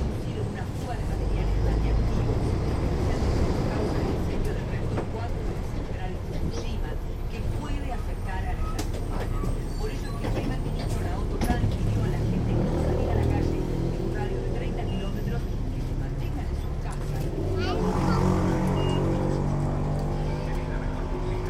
Omnibus, Montevideo, Uruguay - noticias sobre el terremotio de japon
While the bus is going downtown the radio is playing the news